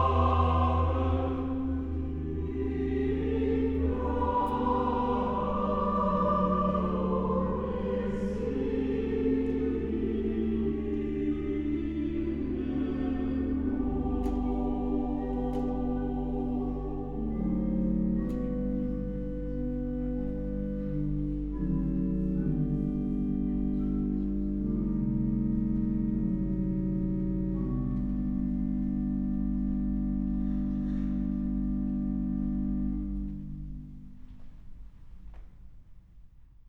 St.Nikolai, Altstadt Spandau, Berlin, Deutschland - choir concert
public choir concert at St.Nikolai church, Berlin Spandau
(Sony PCM D50, Primo EM172)